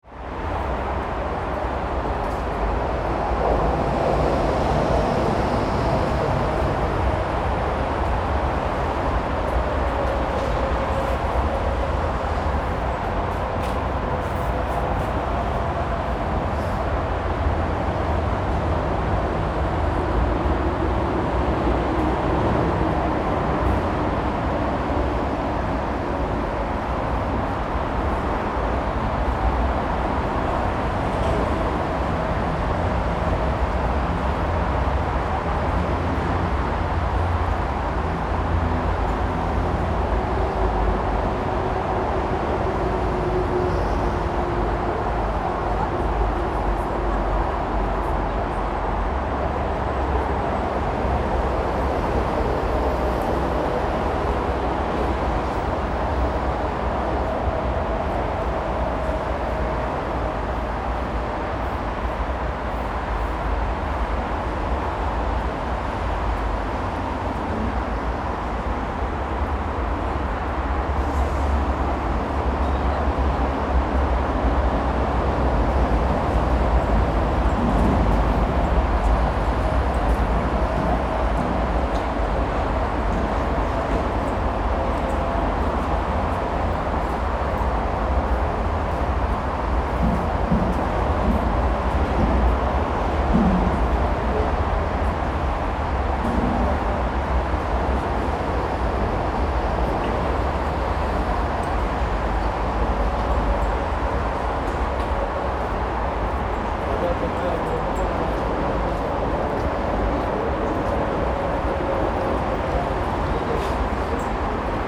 Westend, Berlin, Germany - Berlin's loudest sonic place?
This S-Bahn station sits in the middle of 2 motorways - the busiest route in and out of the city. Waiting there one is constantly surrounded and immersed in traffic. Sometimes you can't even hear the trains arriving. The Berlin Senate's publication on city noise describes this as Berlin's noisiest spot.